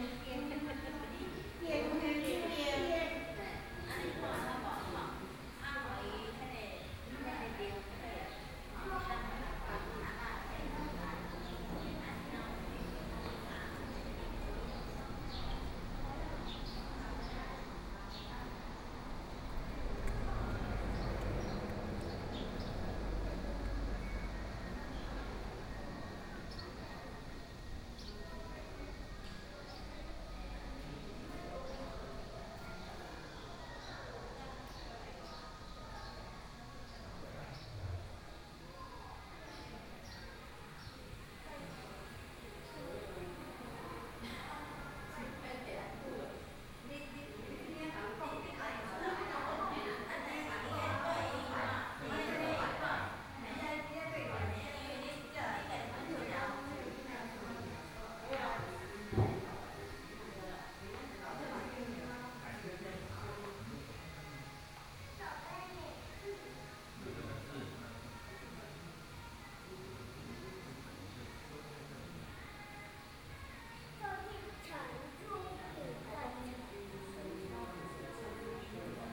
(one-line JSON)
{"title": "保安宮, 壯圍鄉過嶺村 - walking in the temple", "date": "2014-07-26 14:16:00", "description": "In the temple, Traffic Sound, Birdsong sound, Small village\nSony PCM D50+ Soundman OKM II", "latitude": "24.76", "longitude": "121.82", "altitude": "9", "timezone": "Asia/Taipei"}